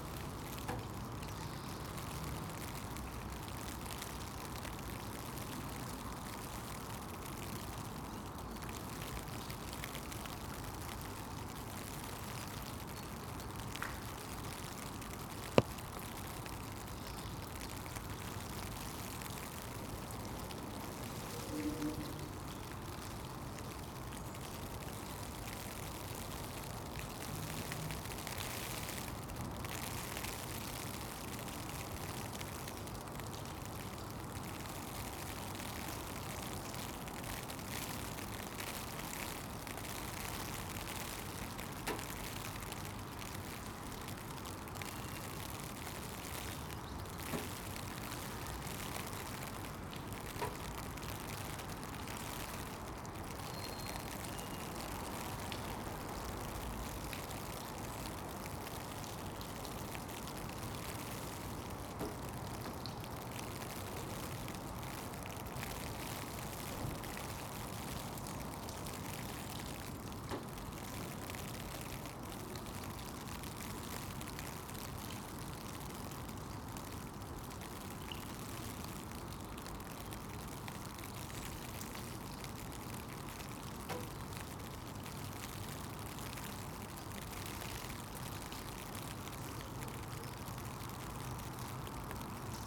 Contención Island Day 23 inner north - Walking to the sounds of Contención Island Day 23 Wednesday January 27th
The Poplars High Street Hawthorn Road Back High Street West Avenue Ivy Road
At the back of a car park
behind a church
one car
Unseen
a dunnock sings from undergrowth
Woodpigeons display on the rooftops
the male’s deep bow and tail lift
People walk along the street
looking ahead most don't see me
one man does he gives me a thumbs up